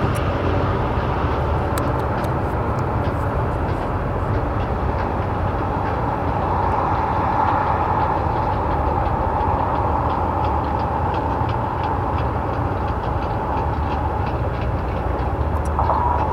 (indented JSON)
{
  "title": "Hi-Crush Proppant Frac Sand mine, Wyeville, WI, USA - Hi-Crush Frac Sand extraction and loading",
  "date": "2013-05-05 16:30:00",
  "description": "Wisconsin has the most suitable sand in the country for the oil frac boom. Billions of pounds of this sand is being sent to wells in PA. Displacing land from one part of the country for profit in another part.",
  "latitude": "44.04",
  "longitude": "-90.41",
  "altitude": "279",
  "timezone": "America/Chicago"
}